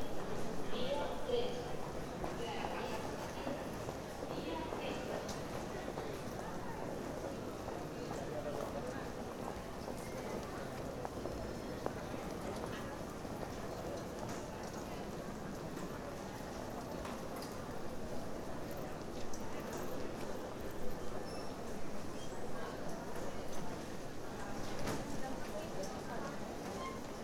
Sants Estació interior
Inside the hall of the train station. Lots of people uses this station for regional, national and international journeys everyday.